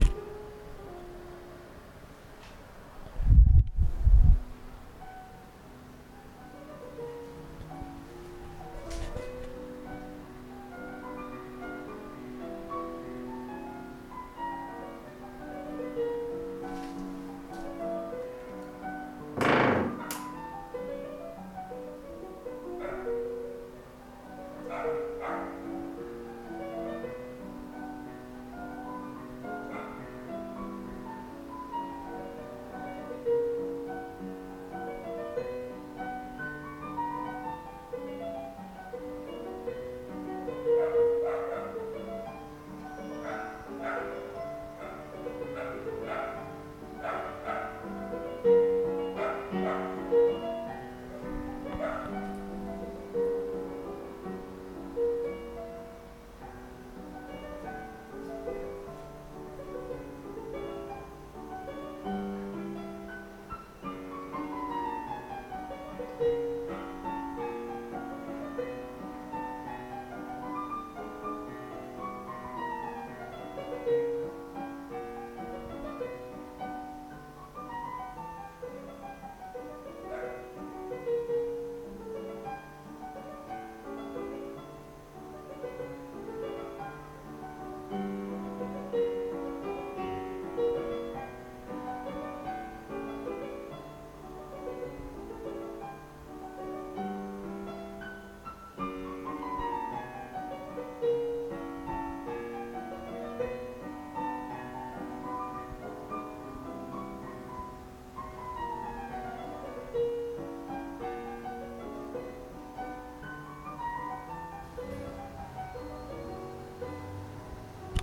{"title": "Gorgoilani, Iraklio, Greece - chopin and street noise", "date": "2021-06-17 18:22:00", "description": "My roommate playing chopin on the piano while some dogs are barking from the outside. I made the record without him knowing about it being outside of his door. I used a h1n zoom microphone.", "latitude": "35.34", "longitude": "25.13", "altitude": "10", "timezone": "Europe/Athens"}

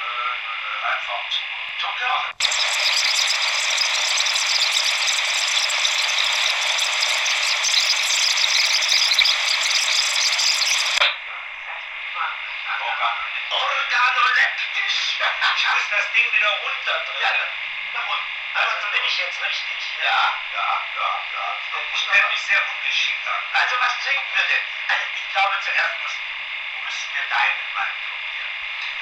{"title": "bonifazius, bürknerstr. - diktaphon", "date": "2008-12-13 14:50:00", "description": "13.12.2008 14:50, diktaphon mit alter kassette, kurzer ausschnitt / dictaphone with old tape, little extract", "latitude": "52.49", "longitude": "13.43", "altitude": "50", "timezone": "Europe/Berlin"}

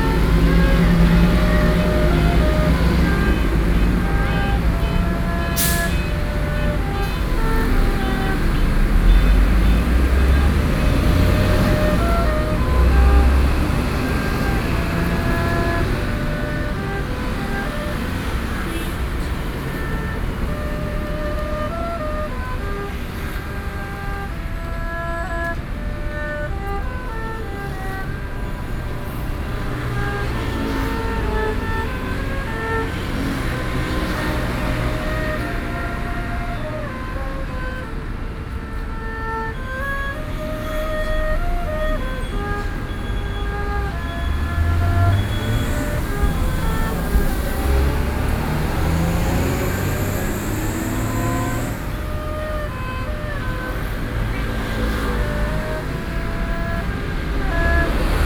{"title": "Taipei, Taiwan - Next to the bus stop", "date": "2012-10-29 15:40:00", "description": "Next to the bus stop, Played traditional musical instruments in the streets", "latitude": "25.05", "longitude": "121.55", "altitude": "11", "timezone": "Asia/Taipei"}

